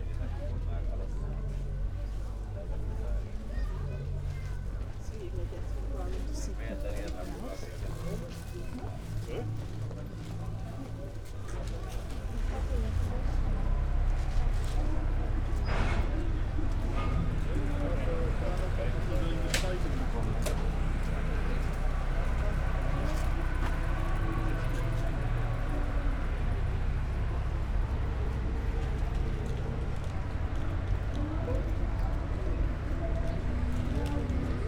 balk: radhuisstraat/van swinderen straat - the city, the country & me: sound walk
evening market, sound walk
the city, the country & me: july 24, 2015